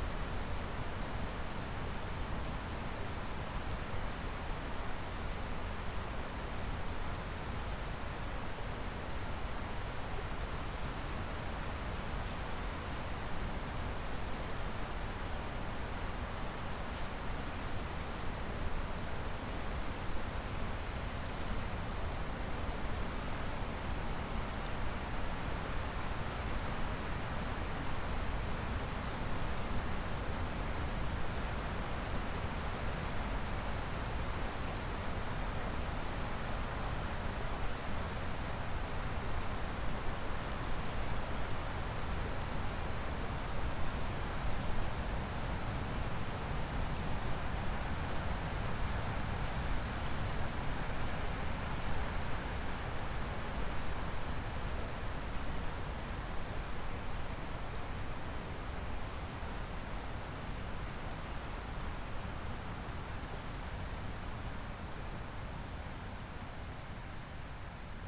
Artmaniskis, Lithuania, at ancient mound
newly discovered mound (the place where castle stood) at the Viesa river. windy day, drizzle.